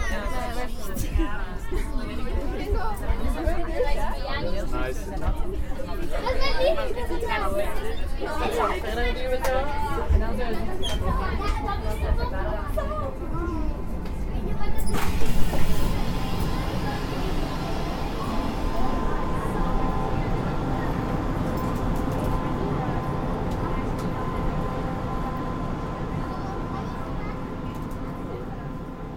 Is this a livestock trailer ? No no no ! This is a normal train on saturday, where scouts are playing loudly !